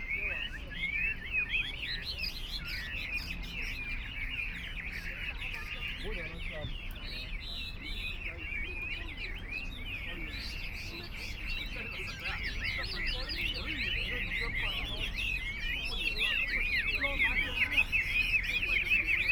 A lot of people put the cage hanging from a tree, Birdcage birds chirping, Binaural recording, Zoom H6+ Soundman OKM II